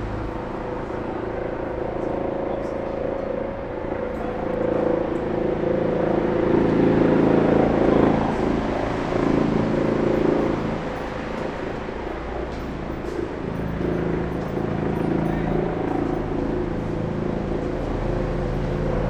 berlin, bürknerstraße: in front of radio aporee - police helicopter over neukölln
30.04.2009 23:45 police helicopter cruising over neukölln, probably observing suspicious mayday activities